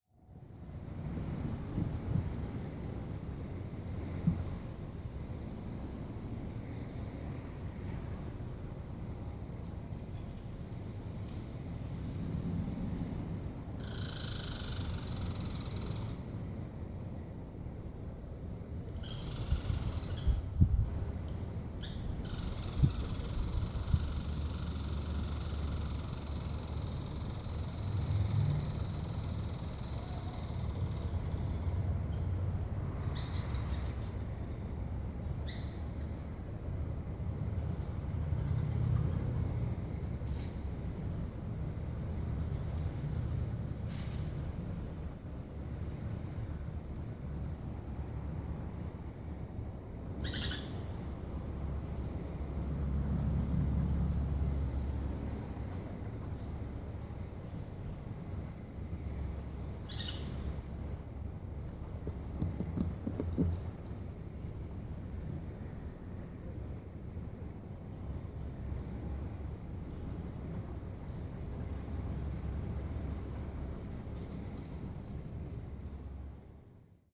Gorsebrook Avenue, Halifax, NS, Canada - The Oaks
Field recording at Saint Mary's University. The Oaks Outdoor Classroom is situated next to the International Activities office in the densely wooded southwest corner of campus. This recording includes ambient sounds of traffic and the nearby container port. Two Red Squirrels scamper through the foliage and chatter loudly.
August 2014